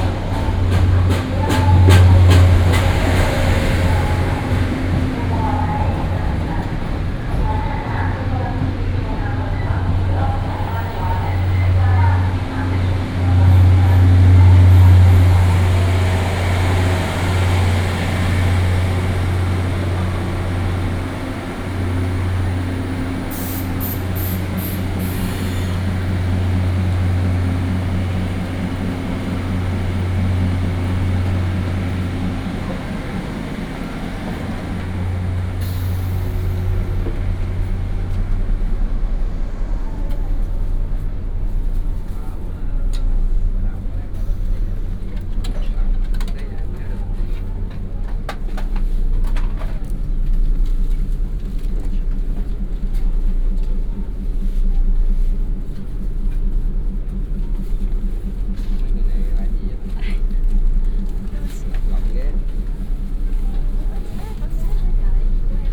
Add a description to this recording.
At the train station platform, Train arrives at the station